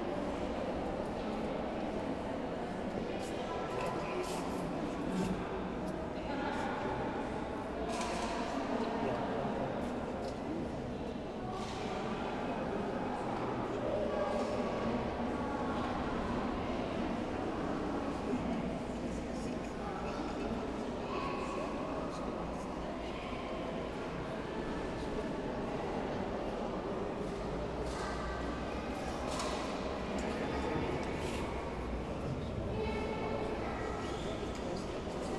Mechelen, Belgique - Mass in the cathedral
End of the mass in the Sint-Rombouts cathedral. Baptisms of children and organ, people going out of the cathedral, silence coming back.